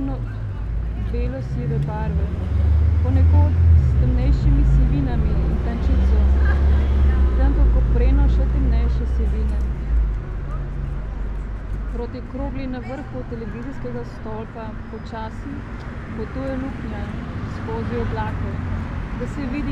cankarjeva cesta, ljubljana - street reading-fragment 2
this sonorous fragment is part of Sitting by the window, on a white chair. Karl Liebknecht Straße 11, Berlin, collection of 18 "on site" textual fragments ... Ljubljana variation
Secret listening to Eurydice 10, as part of Public reading 10